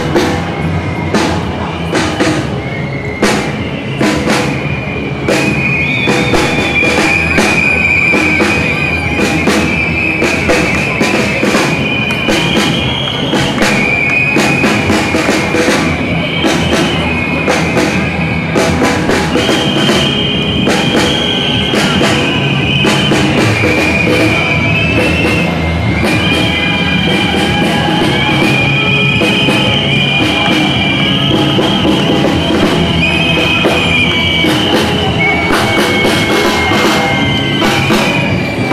a peacefull and dignified claim for recognition of human rights, supported by many citizens